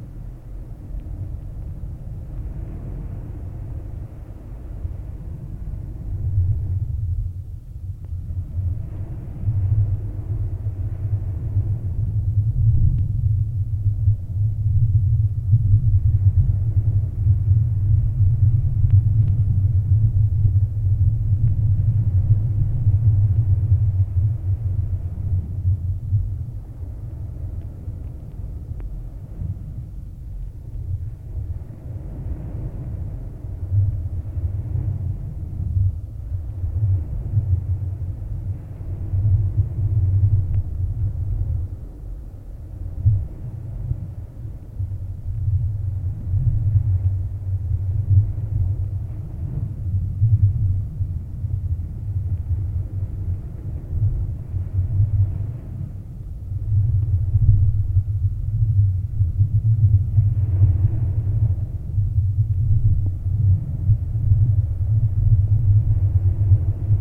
Stalos, Crete, in a concrete tube - hydrophone in a sand
hydrophone buried in the sand at the concrete tube